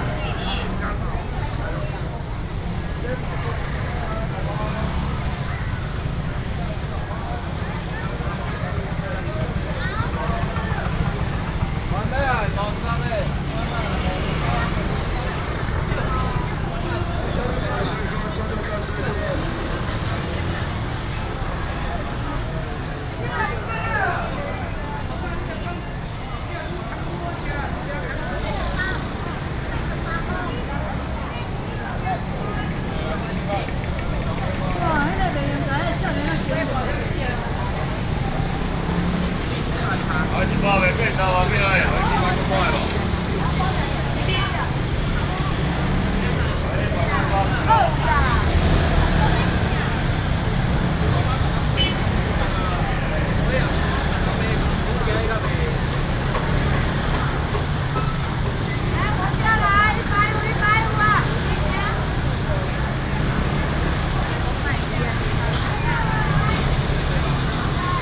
Ln., Sec., Bao’an St., Shulin Dist. - Walking in the traditional market
Walking through the traditional market, Traffic Sound
Binaural recordings, Sony PCM D50
New Taipei City, Taiwan, 20 June 2012, 10:30